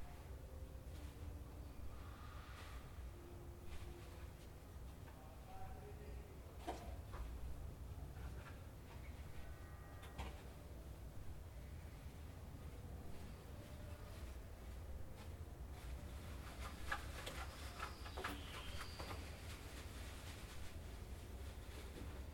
Milano, Italia - il cortile sul retro
cortile molto calmo, cinguettii